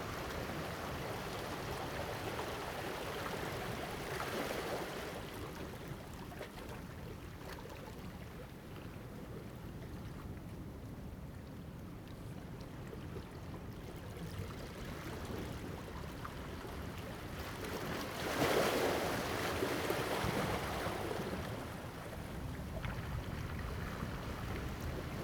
Jizazalay, Ponso no Tao - Tidal and wave
sound of the waves, Tidal and wave
Zoom H2n MS +XY